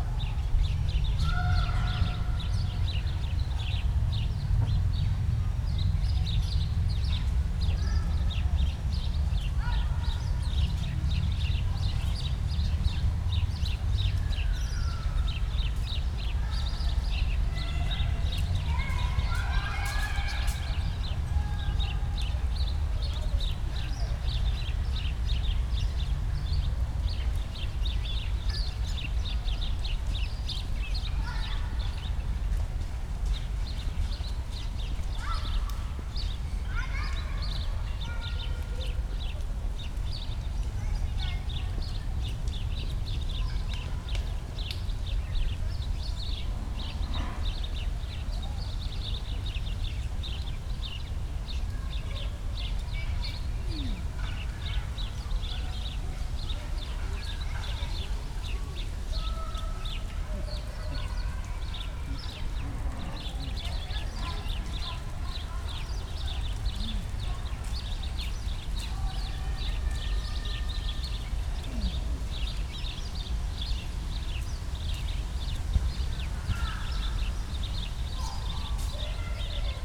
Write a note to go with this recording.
place revisited, on a sunny early autumn Sunday, kids playing, early afternoon ambience at Gropiushaus, (Sony PCM D50, DPA4060)